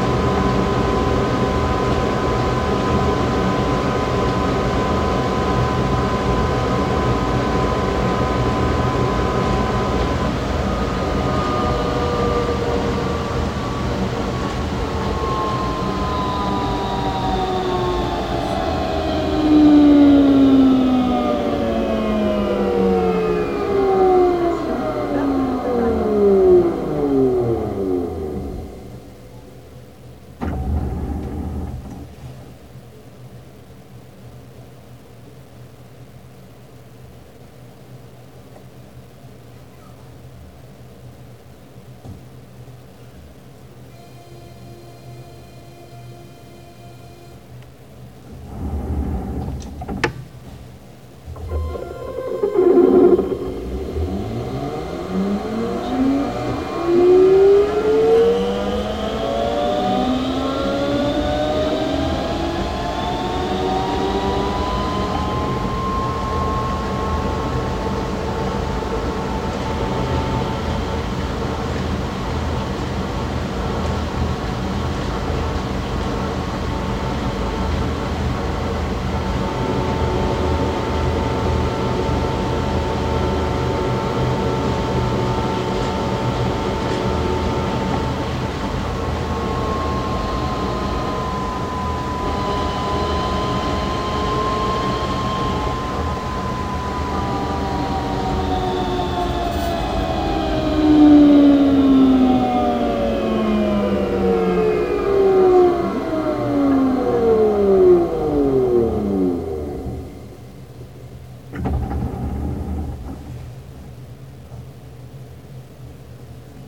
in the metro
captation : C411 PP AKG Vibration Pickup on the Window / Zoom H4n